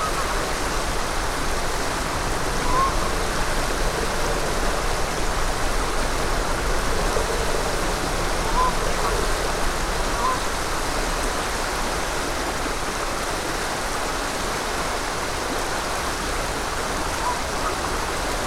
Powers Island Hiking Trail, Sandy Springs, GA, USA - Rushing River
Another recording along Powers Island Trail. This time the recorder is a little closer to the water. There's a bit of traffic in the background, but it's mostly covered by the sound of the water. There are geese calling in parts of the recording. The sounds were captured by clipping the mics to a tree.
[Tascam DR-100mkiii & Clippy EM-272 omni mics]